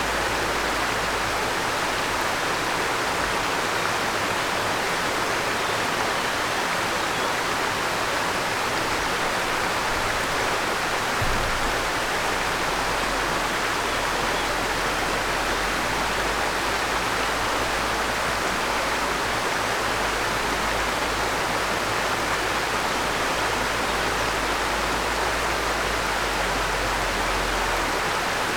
Sony PCM D100 with built-in mics